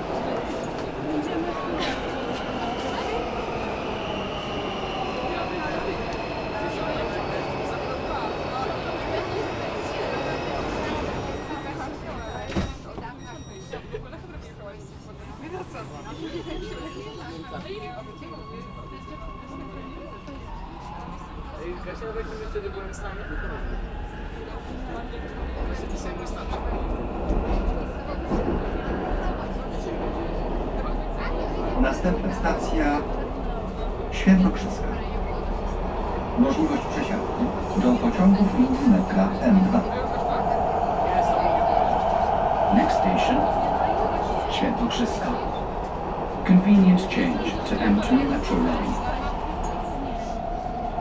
waiting for my train and traveling one stop. (sony d50)
Warszawa, Poland, November 2015